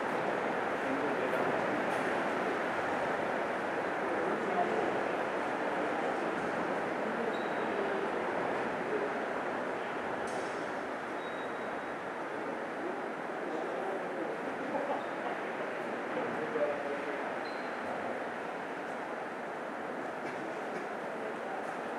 대한민국 서울특별시 서초구 동작역 1번출구 - Dongjak Station, Gate No.1
Dongjak Station, Gate No.1, Subway bridge alongside a stream
동작역 1번출구, 동작대교
동작구, 서울, 대한민국, 18 September